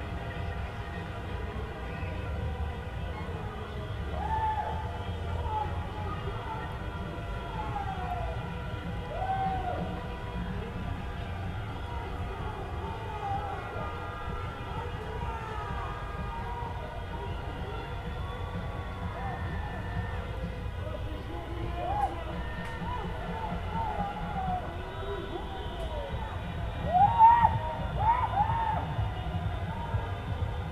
Le maire a été élu.
Pour son élection c'est la liesse, et l'occasion de faire un maximum de bruit. Le défilé de voitures avait tant fait d'oxydes d'azotes que le laindemain encore, l'air s'était chargé en ozone, c'était aussi difficile de respirer que si on était dans le massif de la vanoise en métropole l'année 2003 où les valeurs d'ozones peuvent grimper à plus de 300 microgramme par mètres cubes.
Réunion, March 2014